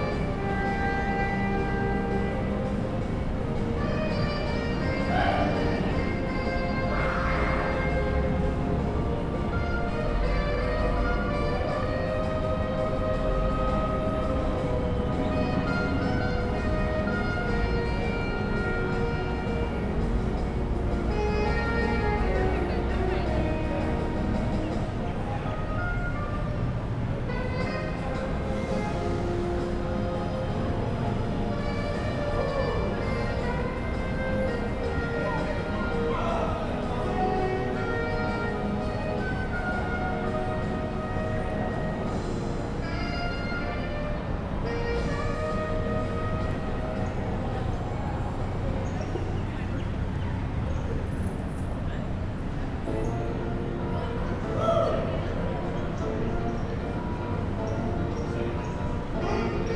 neoscenes: 333 bus stop with sax